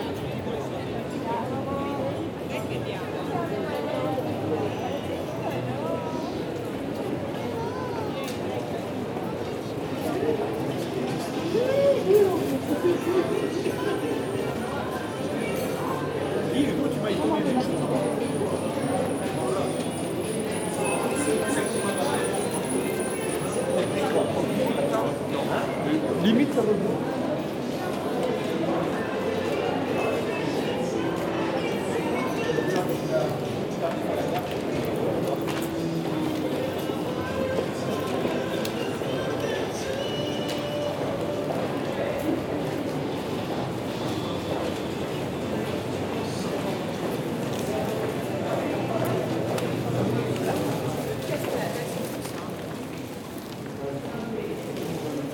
Charleroi, Belgique - Winter games
On a completely renewed square, there's a rink. Recording of the young people playing. After I made a walk into the shopping mall. Santa Claus is doing selfies with babies.